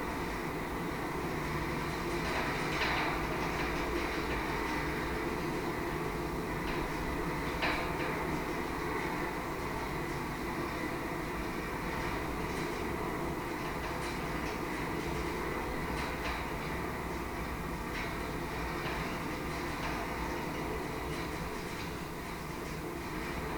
Cerro Sombrero, Región de Magallanes y de la Antártica Chilena, Chile - storm log - antenna II, fence
hilltop atenna II, contact mic on fence, wind force SW 31 km/h
Cerro Sombrero was founded in 1958 as a residential and services centre for the national Petroleum Company (ENAP) in Tierra del Fuego.